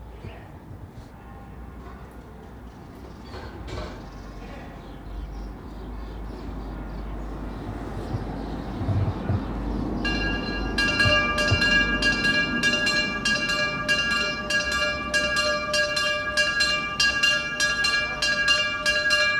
Churches in Burgos, Spain, had decided to ring their bells every day at noon in support of health workers. I decided to try and record the bell of the Poor Clares Convent - a convent where life has proceeded under confinement for centuries.